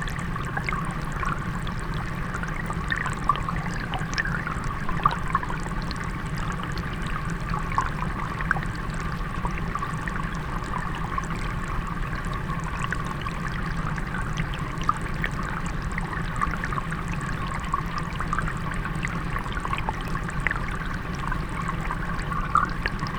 Walking Holme BlckplBridge
Water flowing underneath a footbridge.